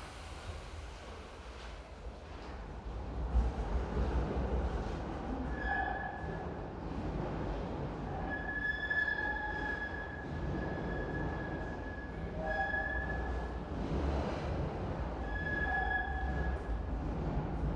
{"title": "Budapest, Vorosmarty Square, Metro M1", "date": "2010-11-26 18:03:00", "latitude": "47.50", "longitude": "19.05", "altitude": "114", "timezone": "Europe/Budapest"}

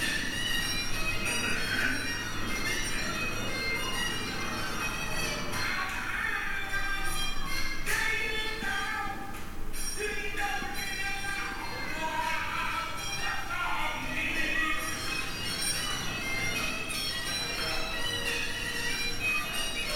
{"title": "Dellviertel, Duisburg, Deutschland - museum dkm", "date": "2014-10-25 18:25:00", "description": "museum dkm duisburg", "latitude": "51.43", "longitude": "6.77", "altitude": "40", "timezone": "Europe/Berlin"}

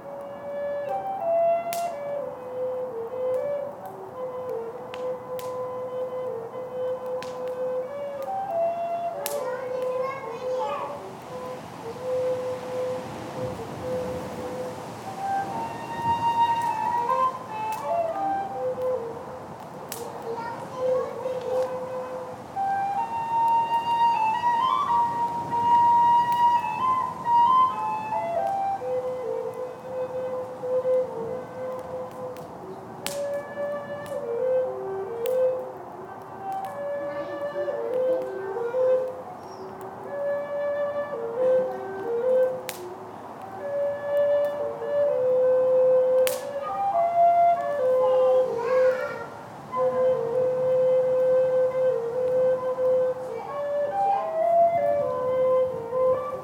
{"title": "Inside the Octagon, Glen of the Downs, Co. Wicklow, Ireland - Chamber Orchestra", "date": "2017-07-29 11:37:00", "description": "This recording was made inside the Octagon: an old, Octagonal structure built by the Freemasons. Kids play, wind blows, fire burns, traffic passes way below at the bottom of the valley along the N11. The recorder is a lovely old wooden one belonging to Jeff. Lower notes are harder to get, and the wind kept blowing into the microphones, so the recording's not pristine. You can hear the strange acoustic of the Octagon. Recorded with the EDIROL R09.", "latitude": "53.14", "longitude": "-6.12", "altitude": "205", "timezone": "Europe/Dublin"}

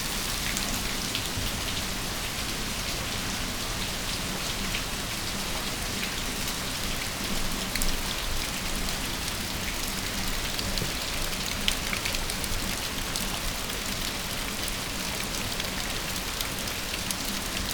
{"title": "moss garden, Nanzenji, Kyoto - rain", "date": "2014-11-02 11:53:00", "latitude": "35.01", "longitude": "135.79", "altitude": "74", "timezone": "Asia/Tokyo"}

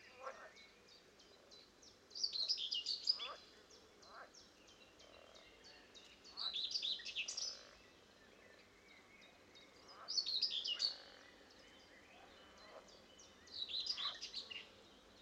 {"title": "Voverynė, Lithuania, at the lake", "date": "2020-06-22 17:35:00", "description": "beautiful lake not so far from town. birds, frogs, wind...", "latitude": "55.54", "longitude": "25.62", "altitude": "152", "timezone": "Europe/Vilnius"}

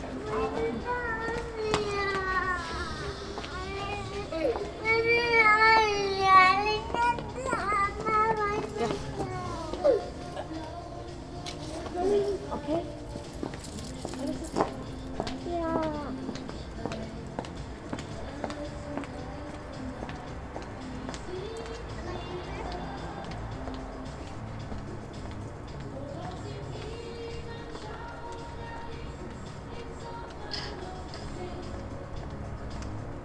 {"title": "sanderstraße: bürgersteig vor gaststätte - the city, the country & me: in front of a berlin old school pub", "date": "2009-01-30 15:38:00", "description": "kurz nach mitternacht, gaststätte bereits geschlossen, durch die heruntergelassenen rolläden ist schlagermusik zu hören, eine familie trifft vor gaststätte mit pkw ein\nshort after midnight, the pub called \"mittelpunkt\" is closed, music sounds through the closed blinds and a familiy arrives in front of the pub by car\nthe city, the country & me: december 31, 2009", "latitude": "52.49", "longitude": "13.42", "altitude": "45", "timezone": "Europe/Berlin"}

